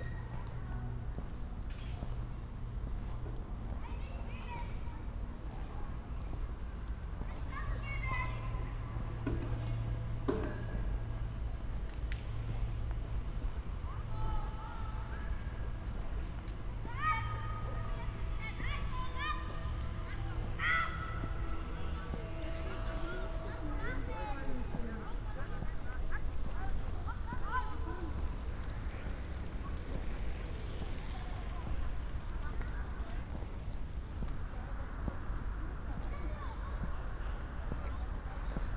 a walk in the inner circle from right to left